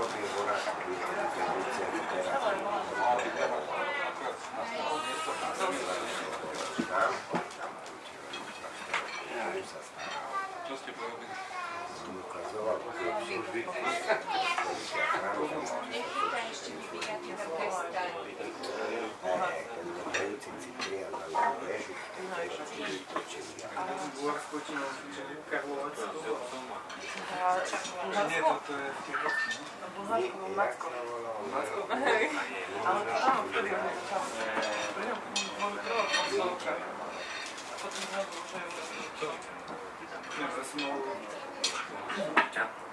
Smells like the 90's Restaurant Michaela, known for its meat jelly.
Bratislava, Slovakia, 17 August 2013